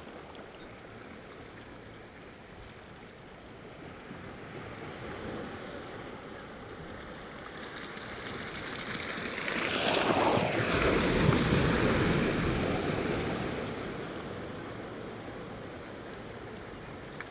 Ars-en-Ré, France - Waves wheeling
On a pier, it's a strong high tide. Big waves are rolling and wheeling along the jetty.
20 May 2018